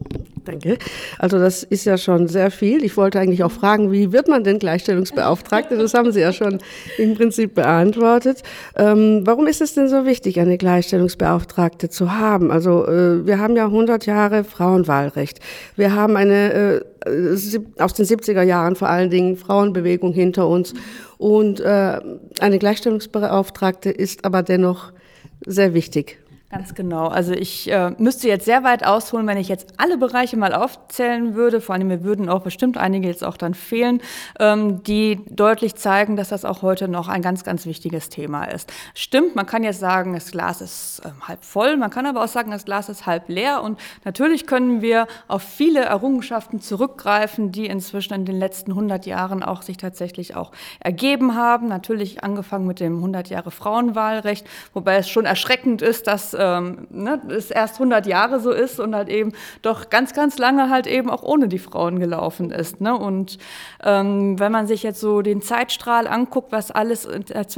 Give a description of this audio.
we are joining Slavi as she enters the office of Maresa Feldmann in the city hall of Dortmund. Maresa Feldmann represents a city office which promotes parity for women. She introduces herself and discusses with Slavi the importance and responsibilities of her job... "women had to asked their husband if they wanted to take up a job... it's all not so long ago...", the recording was produced during a three weeks media training for women in a series of events at African Tide during the annual celebration of International Women’s Day.